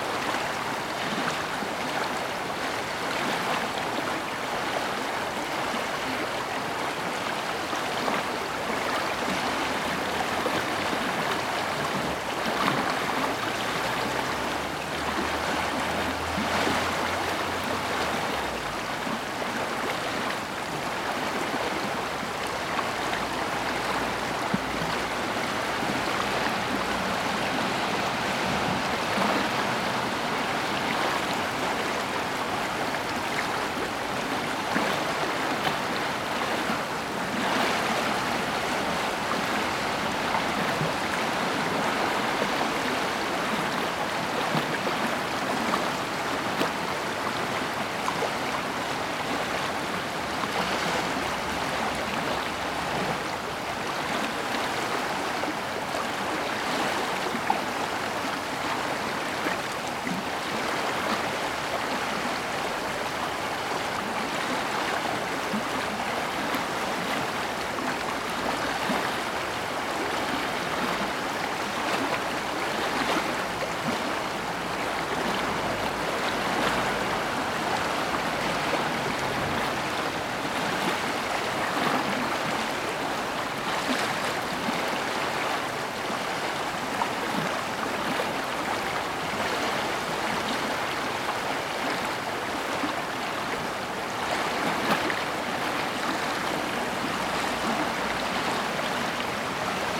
And a few cars on the wet road nearby.
Tech Note : Sony PCM-D100 internal microphones, wide position.